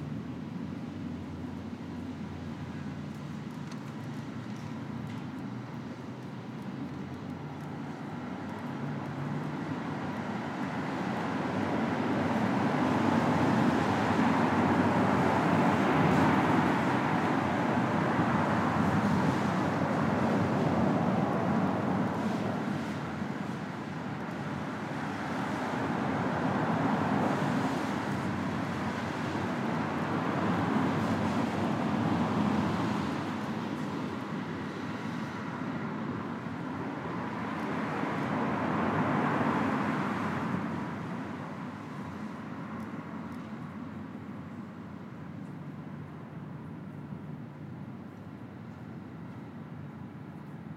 Shaler Ave, Ridgewood, NY, USA - Under the Railroad Bridge
Sounds of traffic under a railroad bridge in Ridgewood, Queens.